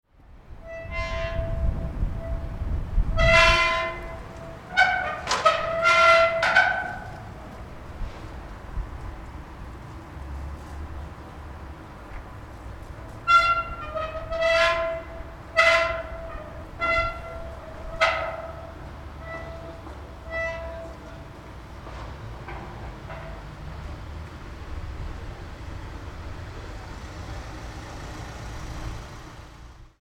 {"title": "gormannstr., wind", "date": "2008-12-21 01:50:00", "description": "21.12.2008 01:50, wind moves a metal ad sign at night", "latitude": "52.53", "longitude": "13.40", "altitude": "40", "timezone": "Europe/Berlin"}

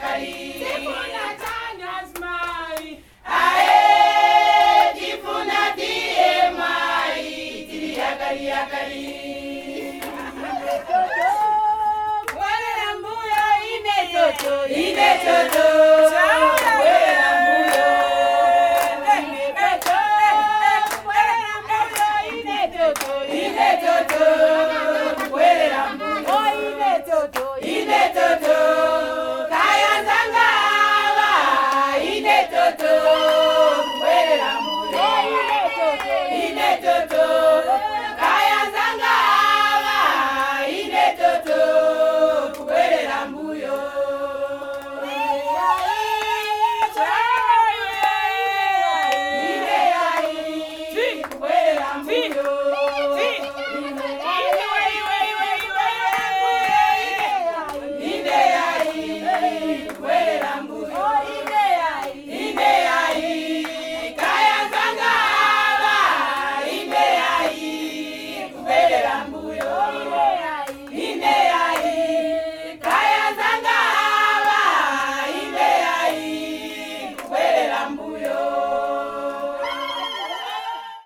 {"title": "Chipata, Lusaka, Zambia - DMI Women singing community awareness", "date": "2012-11-30 10:30:00", "description": "a meeting with representatives from seven of the DMI women groups in their regular meeting place in Chipata/ Lusaka. The women sing and dance community awareness in songs about women empowerment, HIV/ AIDS or childcare.", "latitude": "-15.35", "longitude": "28.30", "altitude": "1222", "timezone": "Africa/Lusaka"}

